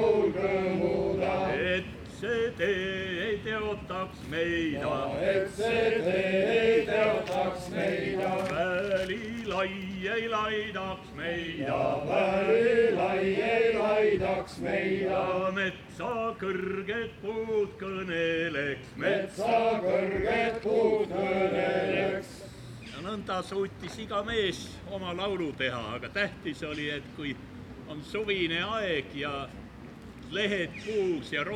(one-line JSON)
{"title": "Lossi, Dorpat, Estland - Lossi, Tartu - Male choir singing traditional Estonian songs in the park", "date": "2013-07-04 17:07:00", "description": "Lossi, Tartu - Male choir singing traditional Estonian songs in the park. Performance during the International Folklore Festival Baltica.\n[Hi-MD-recorder Sony MZ-NH900 with external microphone Beyerdynamic MCE 82]", "latitude": "58.38", "longitude": "26.72", "altitude": "51", "timezone": "Europe/Tallinn"}